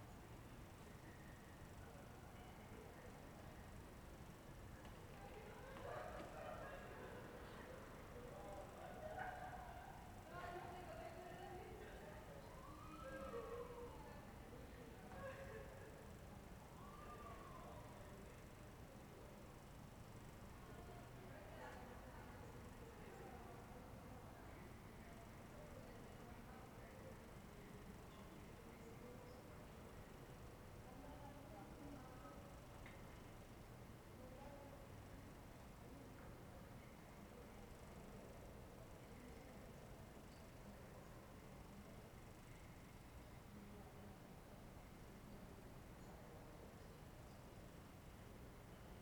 Ascolto il tuo cuore, città. I listen to your heart, city. Several chapters **SCROLL DOWN FOR ALL RECORDINGS** - Round midnight with sequencer and LOL in background
"Round midnight with sequencer and LOL in background in the time of COVID19" Soundscape
Chapter XCI of Ascolto il tuo cuore, città. I listen to your heart, city
Friday, May 29th 2020, eighty days after (but day twenty-six of Phase II and day thirteen of Phase IIB and day seven of Phase IIC) of emergency disposition due to the epidemic of COVID19.
Start at 11:57 p.m. end at 00:01 a.m. duration of recording 33’42”